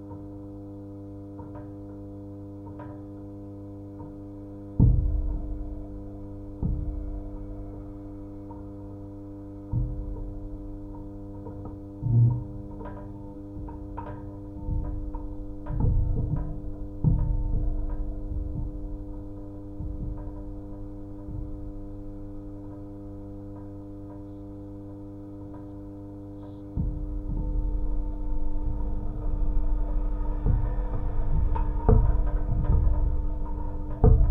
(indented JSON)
{
  "title": "Utena, Lithuania, lamp pole vibrations",
  "date": "2021-11-05 17:30:00",
  "description": "Geophone on tall lamp pole.",
  "latitude": "55.52",
  "longitude": "25.61",
  "altitude": "111",
  "timezone": "Europe/Vilnius"
}